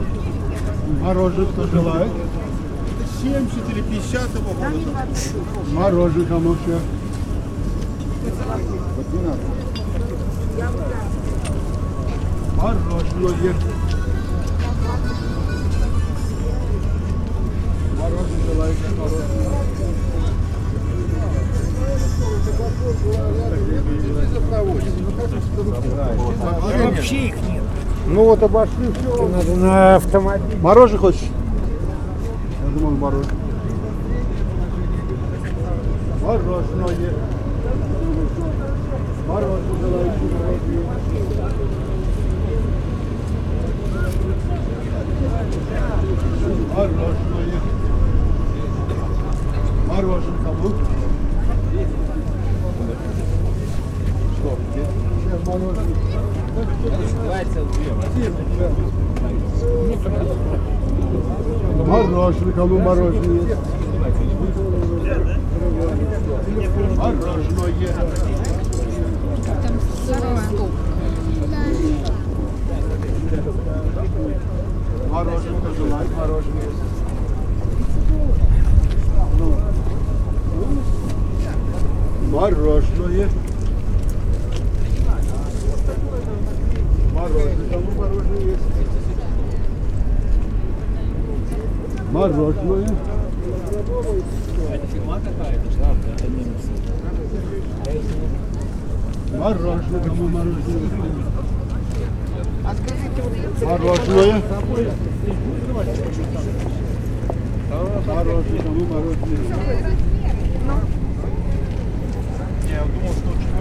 Minsk, Zhdanovichi market - Morozhenoe
An icecream vendor roaming around the 'Fields of Wonder' (Поля чудес) at Zhdanovichi market